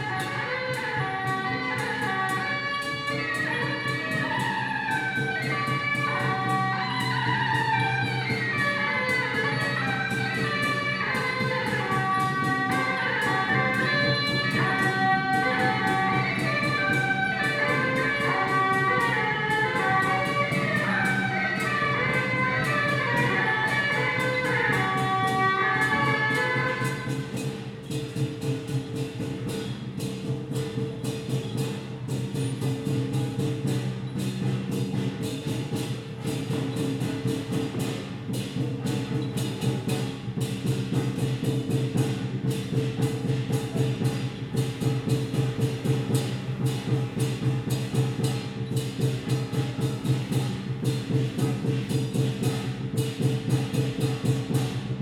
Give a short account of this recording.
Traditional festival parade, Zoom H2n MS+XY